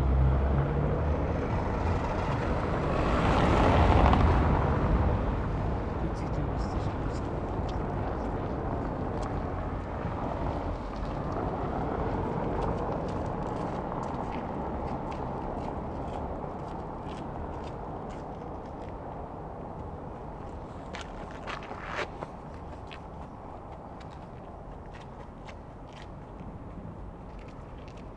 Berlin: Vermessungspunkt Friedel- / Pflügerstraße - Klangvermessung Kreuzkölln ::: 18.01.2011 ::: 16:46